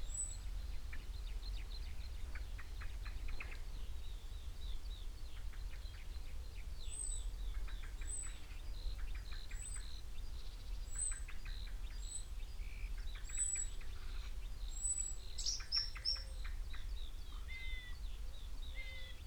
Malton, UK - blackcap song soundscape ...
blackcap song soundscape ... xlr SASS on tripod to ZoomH5 ... bird calls ... song ... from ... wood pigeon ... song thrush ... chaffinch ... whitethroat ... skylark ... crow ... great tit ... great spotted woodpecker ... roe deer after 34.30 mins .. ish ... extended unattended time edited recording ...